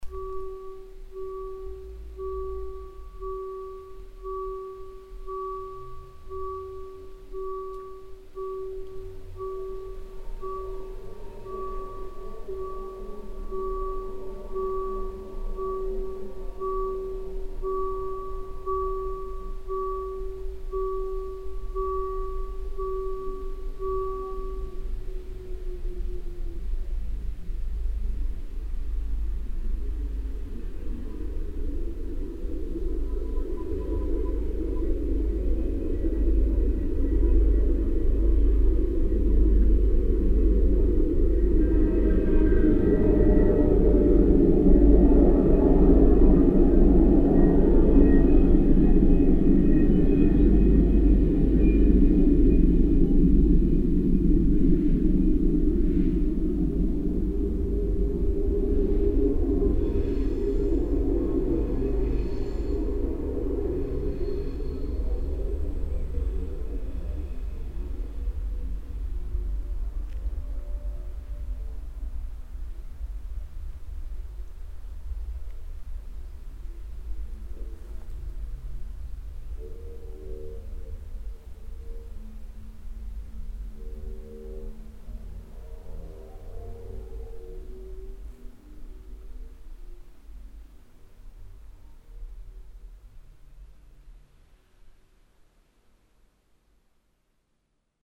kautenbach, railroad crossing
At the gates of a railroad crossing close to the station. The warning signal as the gates close and a train passing by slowly.
At the end a kind of mysterious sound evolution by the long electric wires.
Kautenbach, Bahnübergang
An den Bahnschranken nahe des Bahnhofs. Das Warnsignal beim Schließen der Schranken und ein Zug, der langsam vorbeifährt. Am Ende ein mysteriöses Geräusch bei den langen elektrischen Drähten.
Kautenbach, passage à niveau
Sur un passage à niveau avec barrières proche de la gare. Le signal d’alerte indique que les barrières se ferment et un train passe à faible vitesse. À la fin, une sorte de bruit mystérieux qui se propage sur les long fils électriques.
Project - Klangraum Our - topographic field recordings, sound objects and social ambiences
Kautenbach, Luxembourg, 9 August 2011